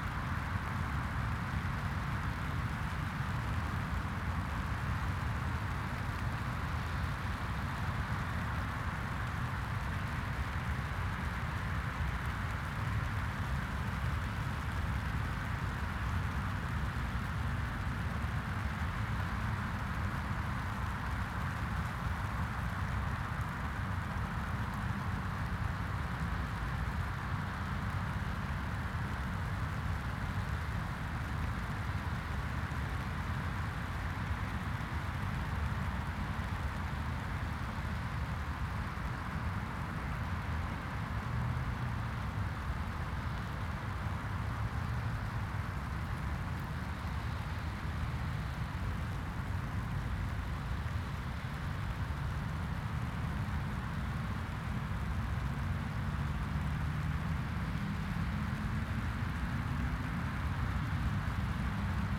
Contención Island Day 16 inner south - Walking to the sounds of Contención Island Day 16 Wednesday January 20th
The Poplars High Street Duke’s Moor Town Moor
The stream is full
jackdaws and crows walk the sodden moor
A flock of black-headed gulls
loafs by a large puddle
they lift and drift off as I approach
A mistle thrush flies off
low
then lifts into a tree
Starlings sit
chatter
and preen
in a short break in the rain
There is enough traffic
to make a constant noise
three 10.00 busses
each empty